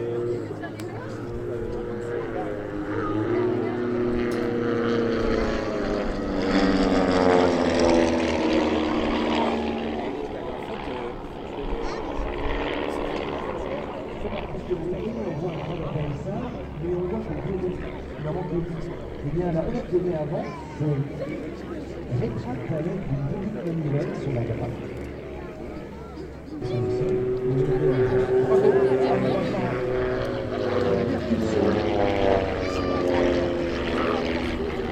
Tréméloir, France - air show
On a sunday at the Air-show, walking through the crowd. Bretiling planes and other old flying machines sounds coming in and out.